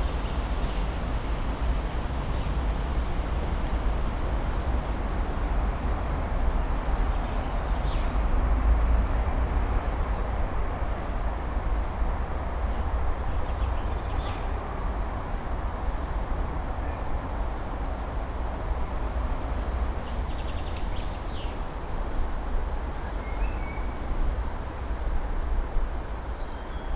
new jewish cemetary
flies in the trees of the cemetary Olšany. Not far from the grave of franz Kafka